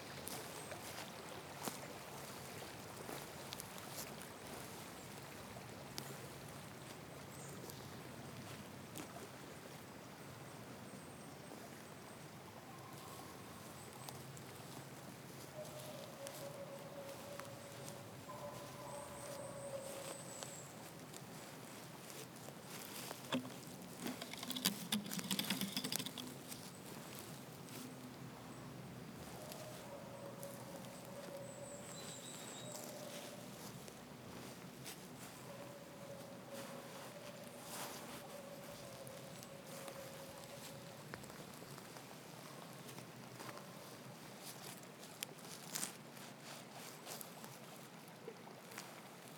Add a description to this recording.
Janvier 2020 - Lozère, SoundWalk forest winter river and wind in the summits, ORTF DPA 4022 + Rycotte + PSP3 AETA + edirol R4Pro